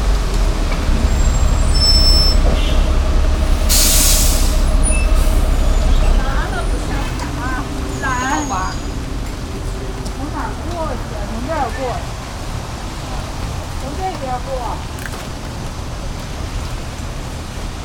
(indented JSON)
{
  "title": "Meilan, Haikou, Hainan, China - Rainy bus stop at Haikou City Hospital",
  "date": "2017-03-31 19:33:00",
  "description": "Rainy bus stop at Haikou City Hospital on Haidian island. The traffic changes with the rain, more people taking busses and many moped riders having already rushed to get home before the skies opened.\nRecorded on Sony PCM-M10 with built-in microphones.",
  "latitude": "20.06",
  "longitude": "110.33",
  "altitude": "4",
  "timezone": "Asia/Shanghai"
}